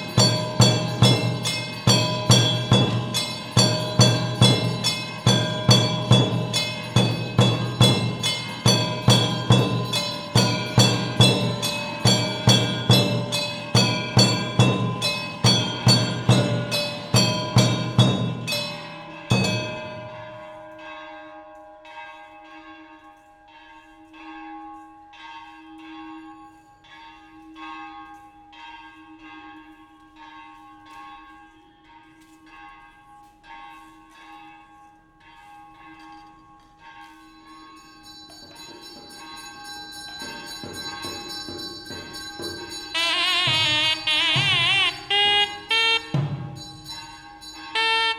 Shri Kaushika Balasubramanya Swamy Murugan Temple
Cérémonie
Puducherry, India, 8 April